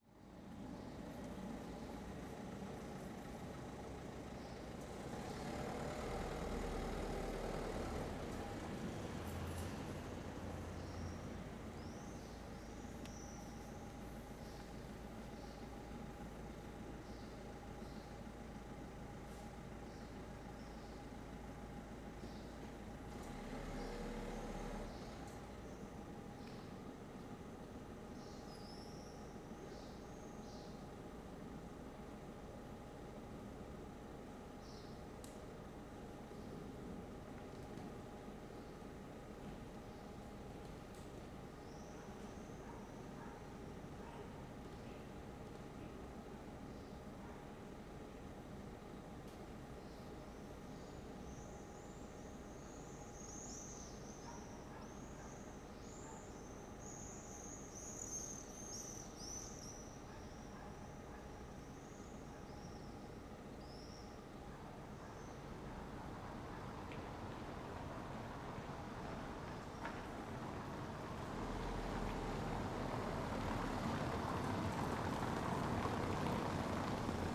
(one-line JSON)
{"title": "Pl. de la Minoterie, Molenbeek-Saint-Jean, Belgique - Rec du matin", "date": "2022-06-30 08:20:00", "description": "Between traffic noise and small birds. A soundscape. Record with : Zoom H8.", "latitude": "50.86", "longitude": "4.34", "altitude": "18", "timezone": "Europe/Brussels"}